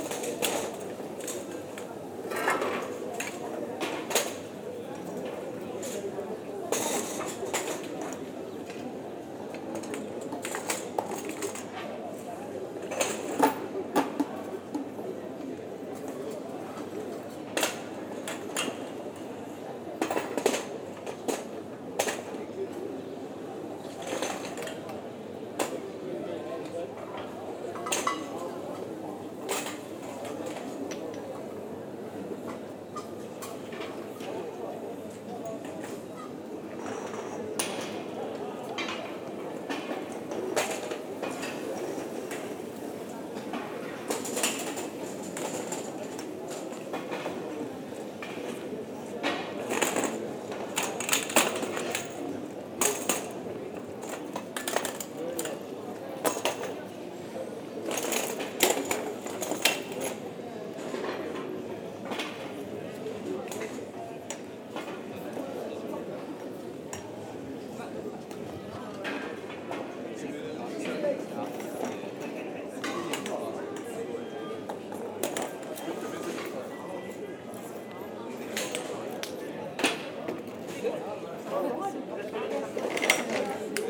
Leuven, Belgique - Prepairing the terraces
Waiters prepairing the bars terraces for a long sunny saturday afternoon, people discussing, a few sparrows in the trees.